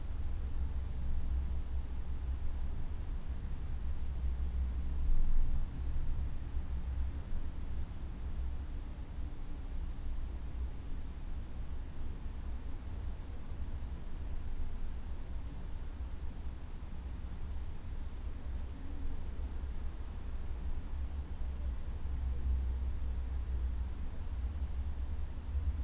Le[]rstelle - heimart göppingen le[]rstelle
Le[]rstelle - ein kunsttherapeutisches Projekt, welches sich als Rauminstallation mit dem Thema Stille auseinander setzt. Zu besuchen im Park des Klinikums Christophsbad in Göppingen....
heima®t - eine klangreise durch das stauferland, helfensteiner land und die region alb-donau